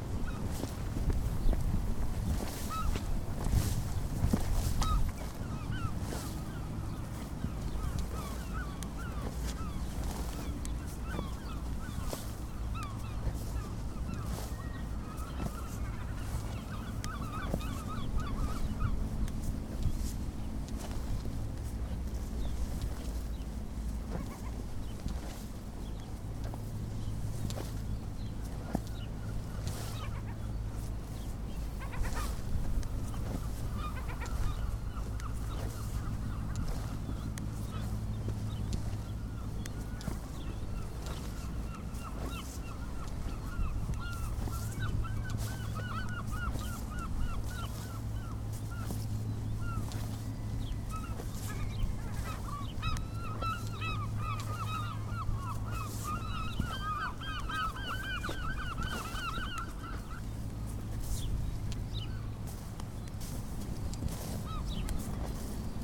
{"title": "World Listening Day soundwalk Portland, Dorset, UK - part of soundwalk for World Listening Day", "date": "2012-07-18 11:00:00", "description": "small part of soundwalk for World Listening Day", "latitude": "50.57", "longitude": "-2.44", "altitude": "21", "timezone": "Europe/London"}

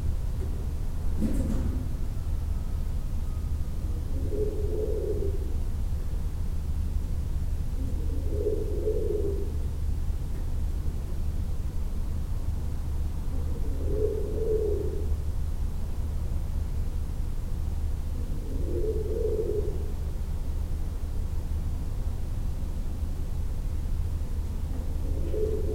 {"title": "Courcelles, Belgique - Abandoned factory", "date": "2018-08-05 07:20:00", "description": "Into a very huge abandoned factory, some doves shouting because I'm quite near the nest and the juvenile birds.", "latitude": "50.45", "longitude": "4.40", "altitude": "116", "timezone": "GMT+1"}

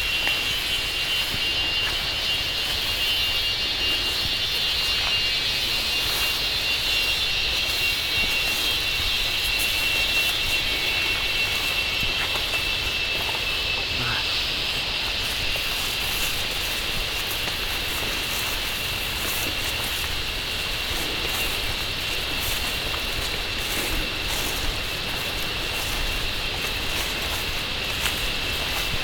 {"title": "Tambon Pa Pae, Amphoe Mae Taeng, Chang Wat Chiang Mai, Thailand - Mörderzikaden Trekking mit Ben", "date": "2017-08-25 16:00:00", "description": "Killer cicadas, immensely shrieking, while trecking in the woods around Pa Pae near the Myanmar border close to Mae Hong Son, Thailand. Ben is running an amazing refugees children school there, and does informative and relaxing trecking tours.", "latitude": "19.12", "longitude": "98.71", "altitude": "859", "timezone": "Asia/Bangkok"}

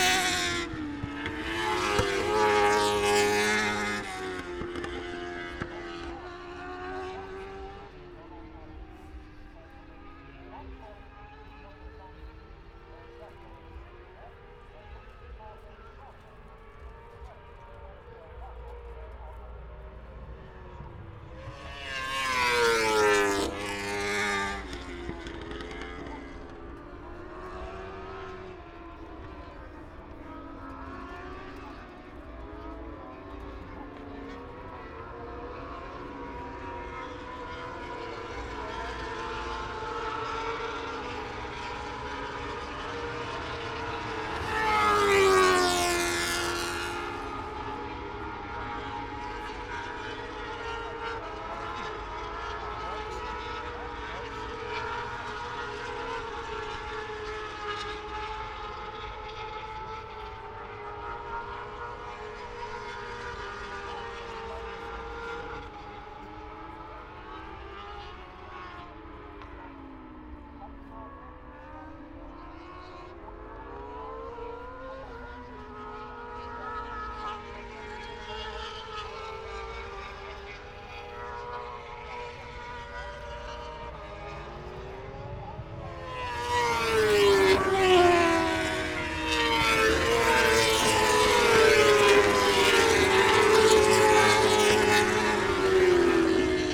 {"title": "Silverstone Circuit, Towcester, UK - British Motorcycle Grand Prix 2017 ... moto two ...", "date": "2017-08-26 15:05:00", "description": "moto two ... qualifying ... open lavaliers clipped to chair seat ...", "latitude": "52.07", "longitude": "-1.01", "altitude": "156", "timezone": "Europe/London"}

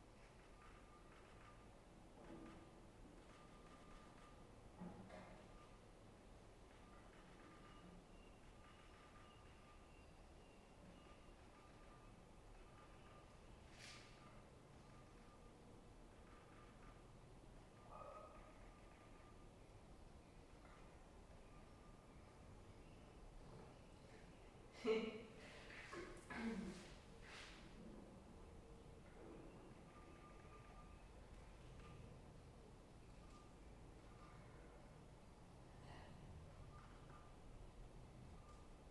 {"title": "St Mary's, Whitchurch-on-Thames - Meditation in St Mary's Church", "date": "2017-06-22 13:00:00", "description": "A fifteen minute meditation at St Mary's Church in Whitchurch. Recorded on a SD788T with a matched pair of Sennheiser 8020's either side of a Jecklin Disk.", "latitude": "51.49", "longitude": "-1.09", "altitude": "44", "timezone": "Europe/London"}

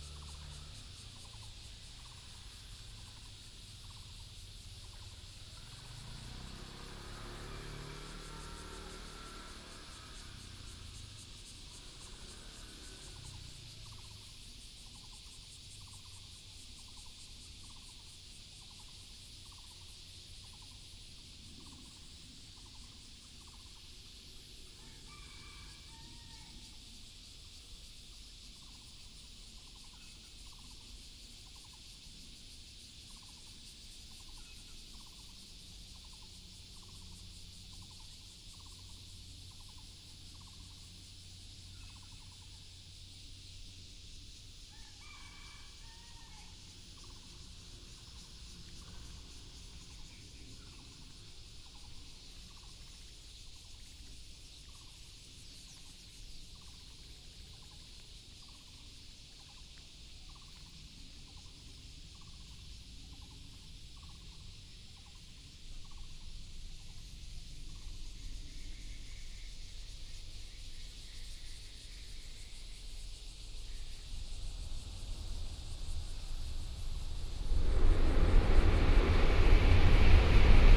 {"title": "羊稠坑, Luzhu Dist., Taoyuan City - Near high-speed railroads", "date": "2017-07-27 07:52:00", "description": "Near high-speed railroads, traffic sound, birds sound, Cicada cry, Dog sounds", "latitude": "25.05", "longitude": "121.31", "altitude": "127", "timezone": "Asia/Taipei"}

